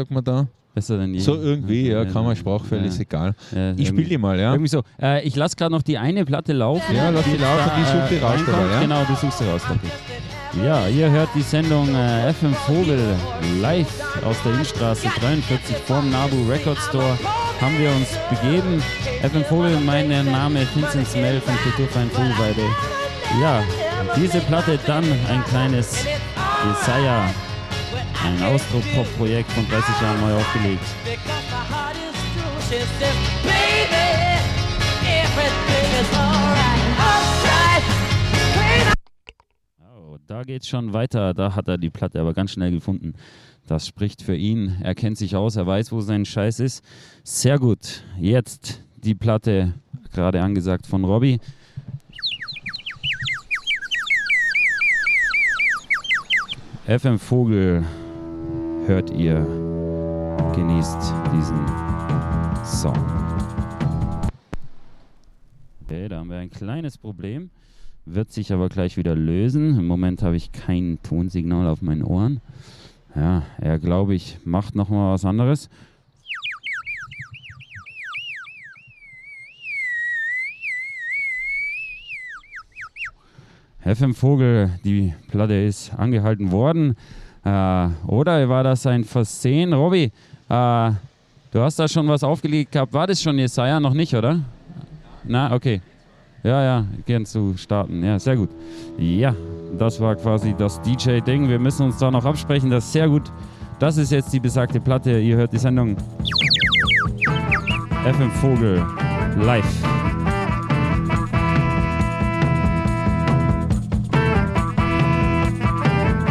Innstraße, Innsbruck, Österreich - fm vogel NABU SPEZIAL
vogelweide, waltherpark, st. Nikolaus, mariahilf, innsbruck, stadtpotentiale 2017, bird lab, mapping waltherpark realities, kulturverein vogelweide, nabu records, robi, fm vogel, radio freirad
Innsbruck, Austria, 1 June 2017